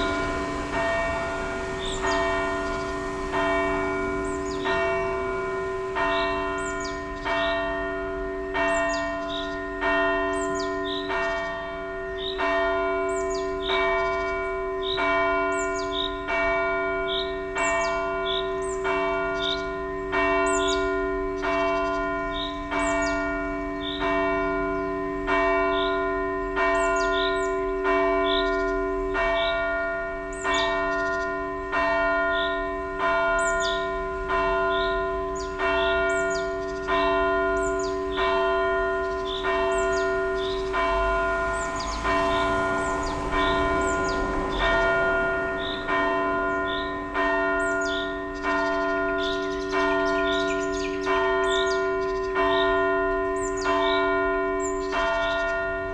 at the church. bells tolling for the deceased one
12 June 2019, 12:05